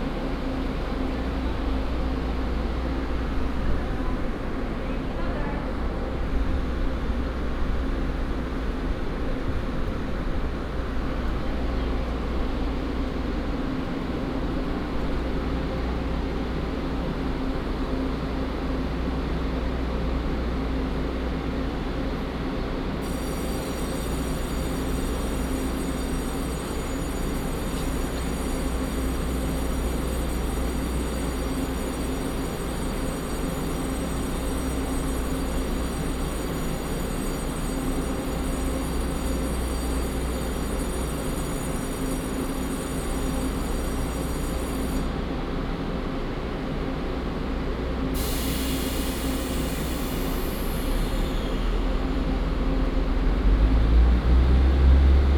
Ershui Station, Changhua County - At the station platform
At the station platform, The train passed, The train arrived at the station, lunar New Year
Binaural recordings, Sony PCM D100+ Soundman OKM II
Ershui Township, 光聖巷25號, 15 February 2018